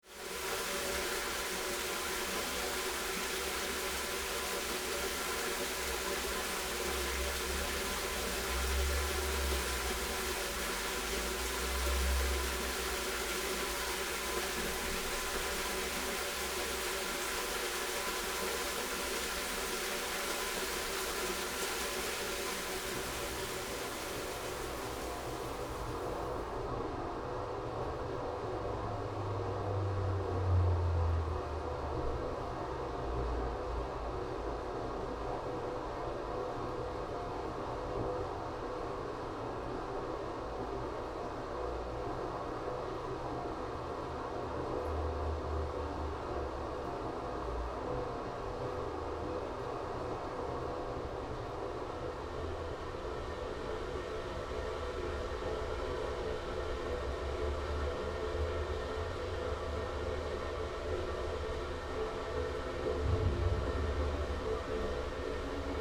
Ebelsberger Schloßweg, Linz, Austria - Water underground resonates in drains on the way to the castle
Schlossweg - the shady, relatively traffic free path to the castle - has a succession of drains, where running water can always be heard resonating beneath your feet, each with a slightly different sound or pitch. This recording - the microphones are dangling though the drain grills - brings them together as one walks up the hill. Occasionally someone ride a bike over the top.
Oberösterreich, Österreich, September 2020